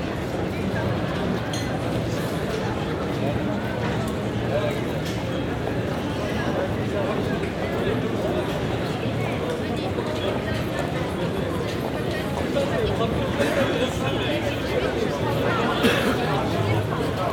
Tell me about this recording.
soundscape on Sunday at 16:40 Tunel, for New Maps of Time workshop